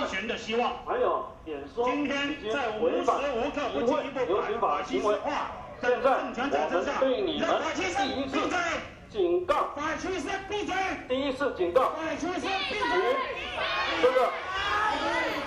Jingmei, New Taipei City - Protest and confrontation
Police are working with Protesters confrontation, Sony ECM-MS907, Sony Hi-MD MZ-RH1